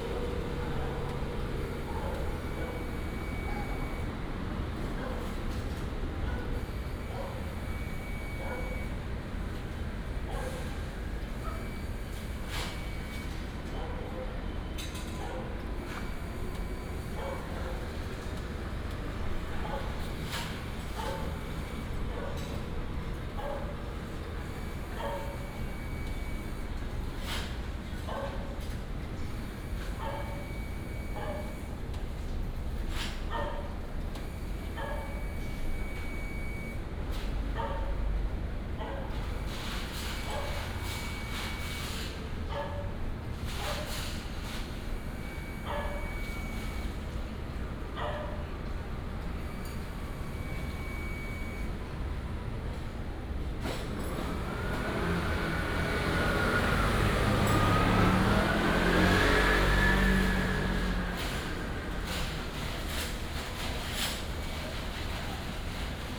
南台路197號, Sanmin Dist., Kaohsiung City - Hostel in a small alley
Hostel in a small alley, Traffic sound, Finish cleaning, Pumping motor noise
Kaohsiung City, Taiwan, 8 May 2018